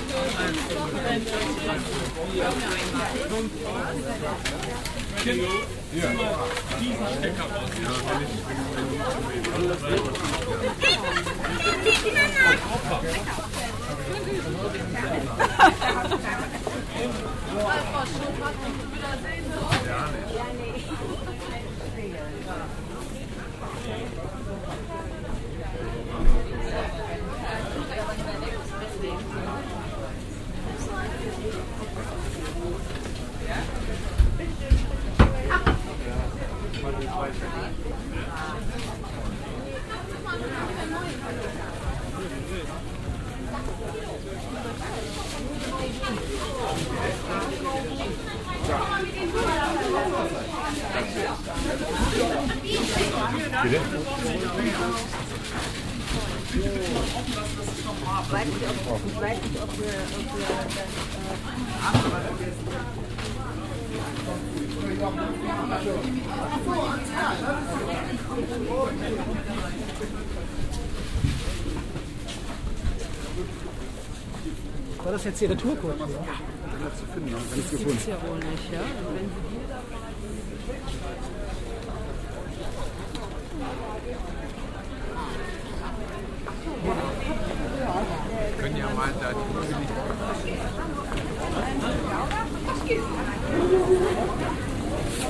ratingen, markttag
wochenmarkt im alten stadtzentrum von ratingen, aufnahme im frühjahr 07 morgens
project: social ambiences/ listen to the people - in & outdoor nearfield recordings